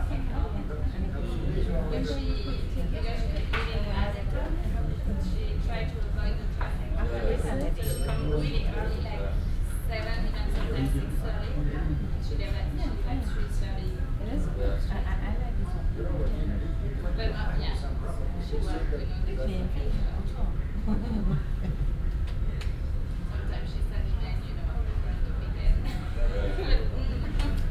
vancouver, granville street, hotel, breakfast room
early in the morning in a hotel breakfast room, bagground music, mellow talking, chairs being moved
soundmap international
social ambiences/ listen to the people - in & outdoor nearfield recordings